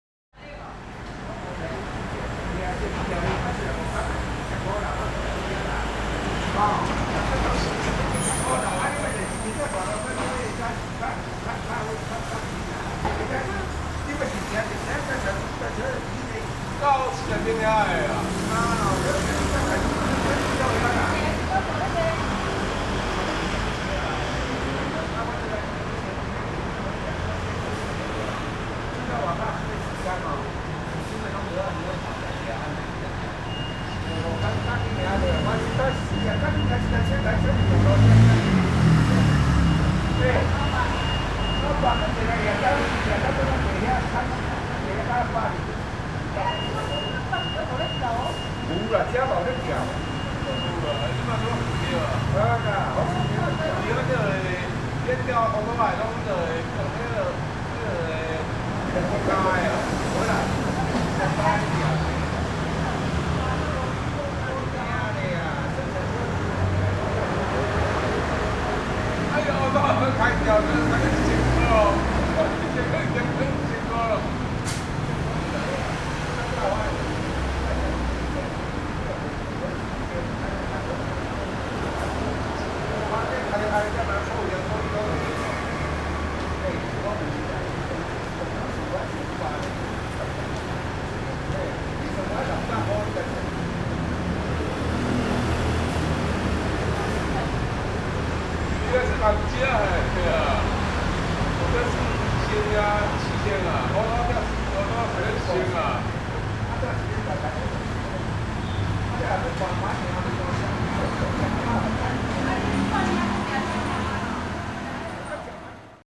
In the alley, Traffic Sound
Zoom H4n +Rode NT4